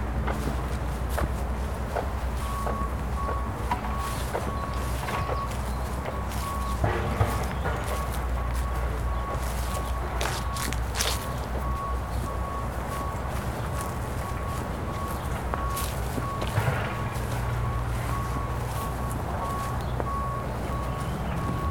Avenue de la Gare, Goussainville, France - Site 4. Le Crould. chemin. 2
Ateliers Parcours commente Ambiances Avec les habitants de Goussainville le Vieux Village. Hyacinthe s'Imagine. Topoï. Alexia Sellaoui Segal, Ingenieur du son